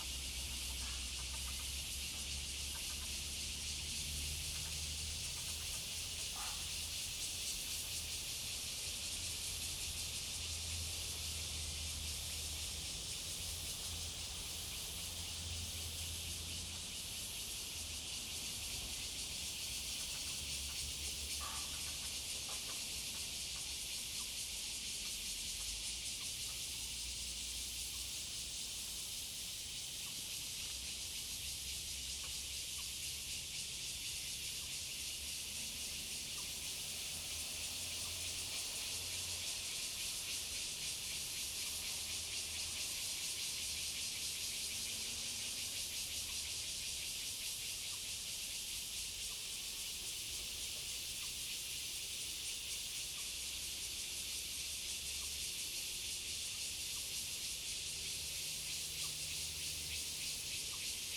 Dongyan, Sanxia Dist., New Taipei City - Cicada sound
Cicada, traffic sound, Zoom H2n MS+XY